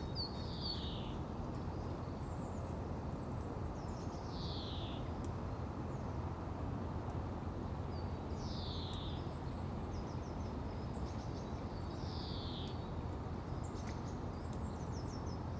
The Poplars High Street Moorfield Little Moor Jesmond Dene Road Osborne Road Mitchel Avenue
Traffic slowed
by snow
and traffic lights
Women sit in cars
talking on phones
A long-tailed tit
flies across the road
pulling its tail behind it
A runner
running with care